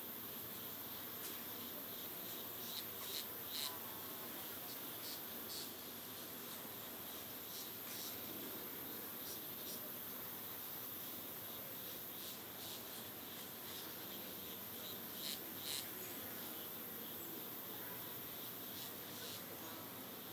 {"title": "Šumarice, Kragujevac, Serbia - Šumarice summer forest atmosphere", "date": "2021-07-16 11:00:00", "description": "This is a forest atmosphere recorded in July in Šumarice, Kragujevac, Serbia. You can hear insects and birds. It was recorded with a pair of FEL Clippy XLR EM272 microphones and Sound Devices MixPre-6 II recorder.", "latitude": "44.01", "longitude": "20.89", "altitude": "232", "timezone": "Europe/Belgrade"}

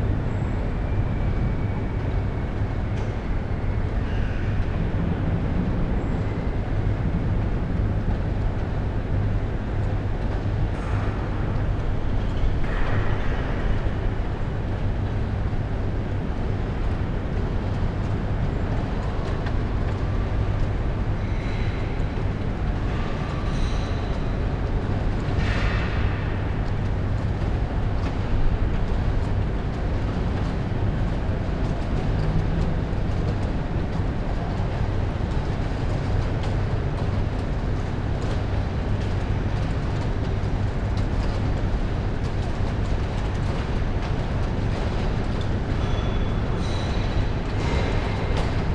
{"title": "amsterdam, neveritaweg: former ndsm shipyard - the city, the country & me: grinding machine and magpies (?)", "date": "2014-06-18 15:54:00", "description": "former ndsm shipyard, someone busy with a grinder, magpies (?) on the rattling glass roof\nthe city, the county & me: june 18, 2014", "latitude": "52.40", "longitude": "4.90", "altitude": "5", "timezone": "Europe/Amsterdam"}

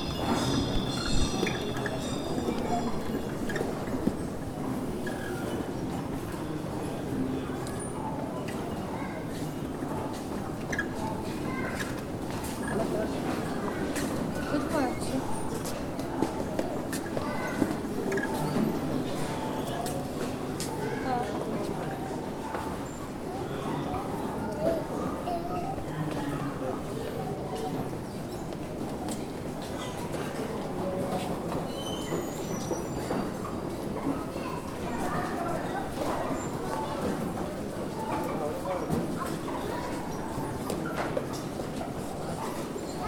{"title": "Гандан тэгчинлин хийд - Gandantegchinlin monastery - Ulan Bator - Mongolia - inside - prayer wheels", "date": "2014-11-08 15:10:00", "description": "inside the temple - prayer wheels continuously turning", "latitude": "47.92", "longitude": "106.89", "altitude": "1328", "timezone": "Asia/Ulaanbaatar"}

